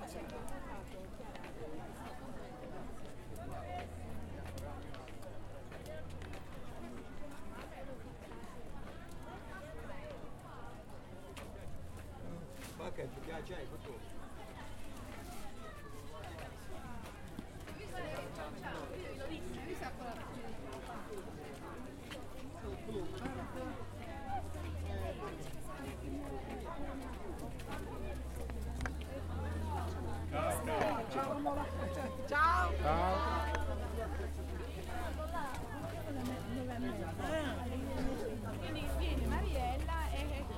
Terlizzi BA, Italia - open-air city market
open-air city market
Terlizzi BA, Italy, 17 February 2015, 10:30